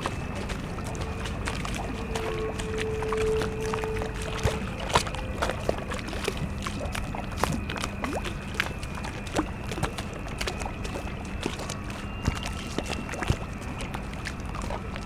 berlin, plänterwald: spree - the city, the country & me: spree river bank
lapping waves of the spree river, squeaking drone of the ferris wheel of the abandonned fun fair in the spree park, distant sounds from the power station klingenberg, towboat enters the port of klingenberg power station
the city, the country & me: february 8, 2014
Berlin, Germany, 8 February 2014